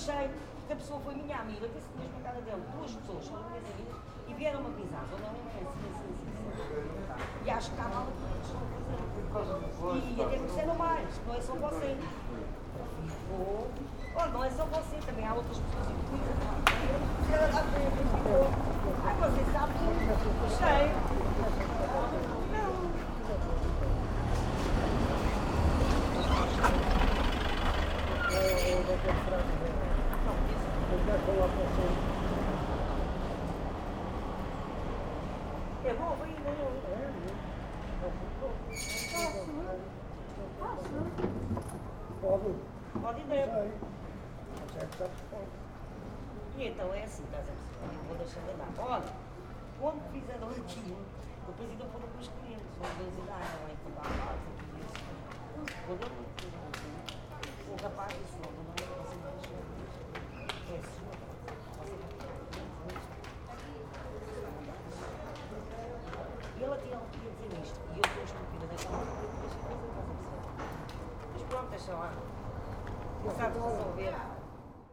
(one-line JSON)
{"title": "Lisbon, Escolas Gerais, Calçada de São Vicente - cafe, waiting for the train", "date": "2010-07-03 11:50:00", "description": "cafe, waiting for the train, street ambience. a girl runs back and forth", "latitude": "38.71", "longitude": "-9.13", "altitude": "62", "timezone": "Europe/Lisbon"}